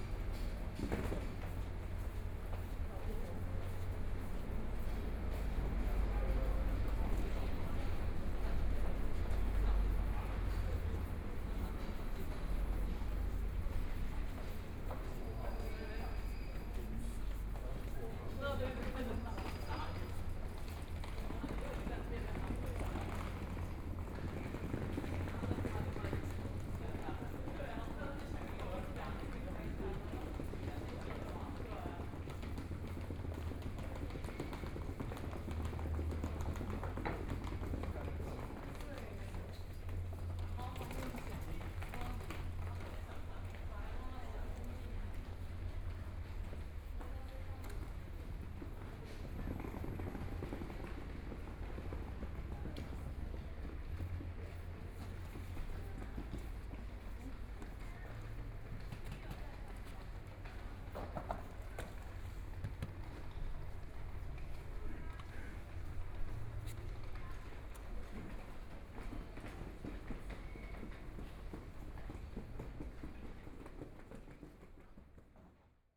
Taitung Station, Taiwan - Walking through the Station
broadcasting sound in the station, Dialogue between tourists, From the platform via underpass, Go to the exit of the station, Binaural recordings, Zoom H4n+ Soundman OKM II